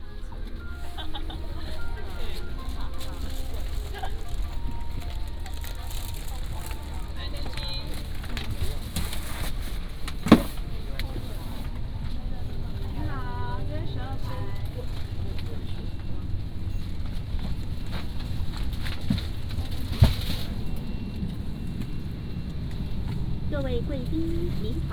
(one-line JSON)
{"title": "Makung Airport, Taiwan - In the cabin", "date": "2014-10-23 19:45:00", "description": "In the cabin", "latitude": "23.56", "longitude": "119.63", "altitude": "30", "timezone": "Asia/Taipei"}